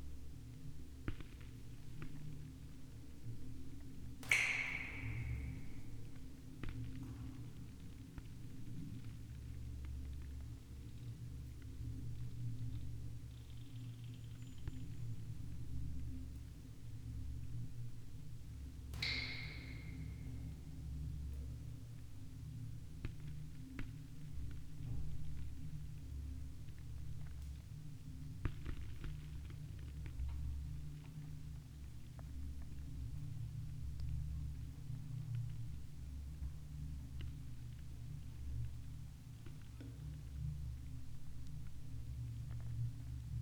rainwater tank, Lokovec, Slovenia - drops of molted frost
quiet lands ... where silence is literary audible
Čepovan, Slovenia, 1 January 2016